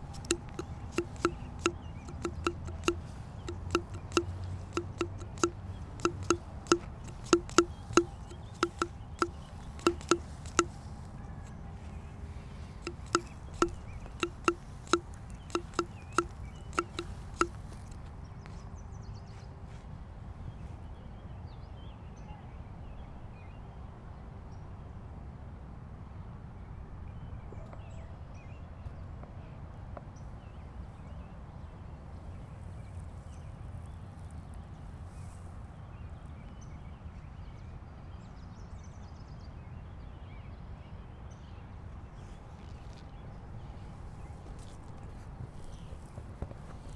Washington Park, South Doctor Martin Luther King Junior Drive, Chicago, IL, USA - Summer Walk 1
Recorded with Zoom H2. Interactive walk through Washington Pk. Exploring the textures and rhythm of twigs bark and leaves.